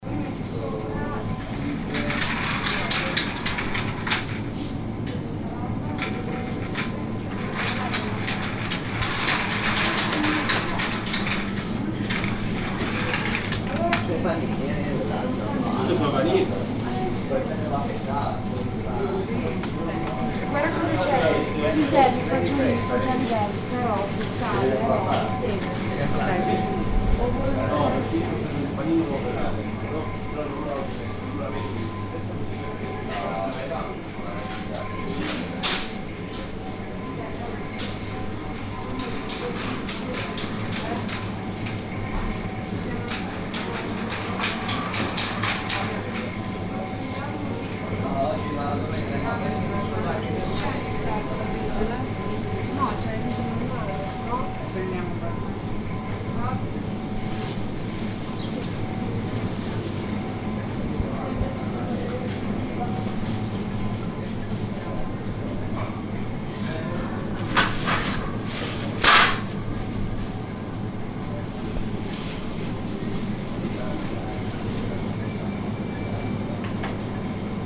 WLD, Bologna, Plenty Market, Via Monte Grappa
Bologna, Italy, 18 July 2010